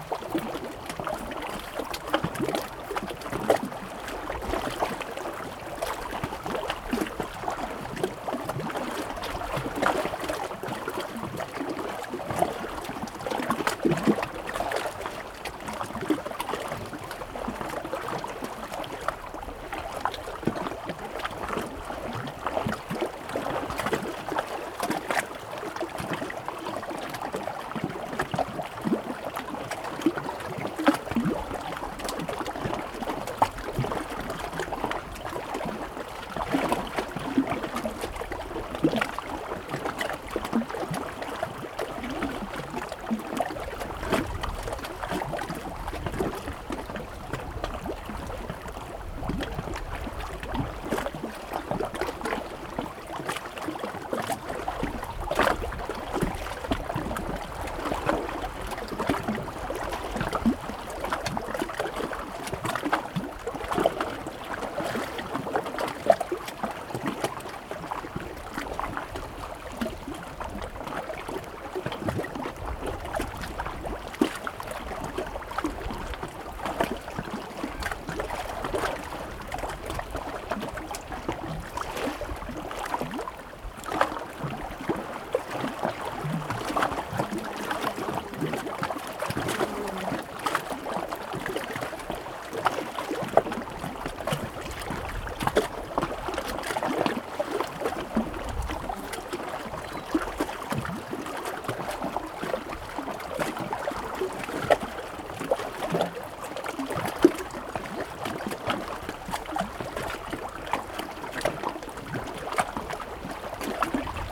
Misery Bay, Manitoulin Island, ON, Canada - Misery Bay summer midday
Warm summer day at Misery Bay. Waves on rocky shoreline. Zoom H2n with EQ/levels postprocessing.